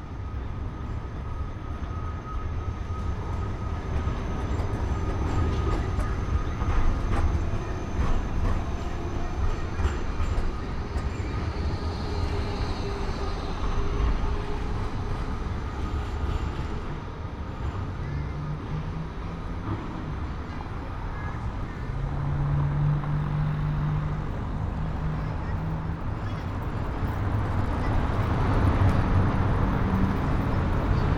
Gr. Steinstr / Gr. Ulrichstr., Halle (Saale), Deutschland - tram traffic triangle
Sunday evening, a traffic triangle, almost no cars, but many trams passing-by, pedestrians in between.
(Sony PCM D50, Primo EM172)
23 October 2016, 18:25